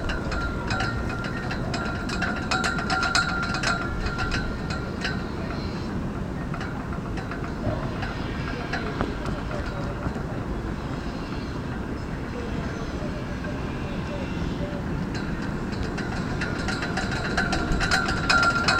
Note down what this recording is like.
Hungary, Balaton Lake, port, wind